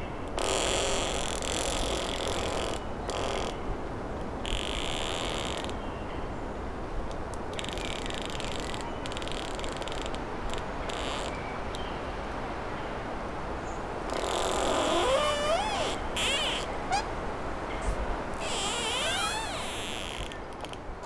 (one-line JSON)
{"title": "Morasko nature reserve, among trees - rubbery trunks", "date": "2015-03-01 14:12:00", "description": "a tree leaning on a different, very tall tree that is swung by strong wind. trunks rubbing against each other making a rubbery squeak.", "latitude": "52.48", "longitude": "16.90", "altitude": "132", "timezone": "Europe/Warsaw"}